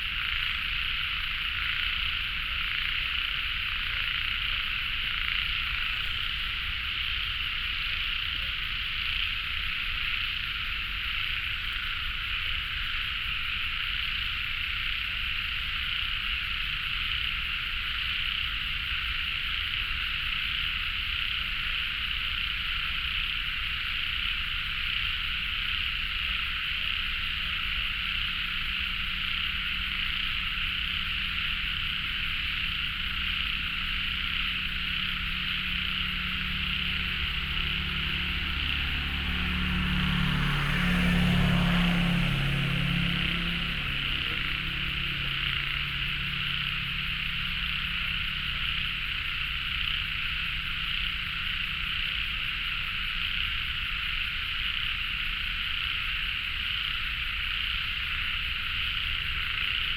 關渡里, Taipei City - Frogs sound
Traffic Sound, Environmental sounds, Birdsong, Frogs
Binaural recordings
Beitou District, 關渡防潮堤, March 2014